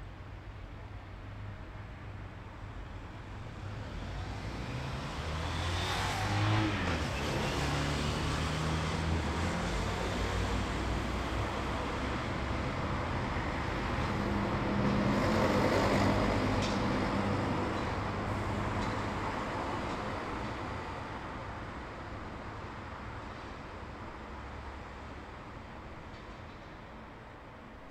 Rijeka, Croatia, Mlaka - Summer Street Soundscape
17 July 2008, 21:00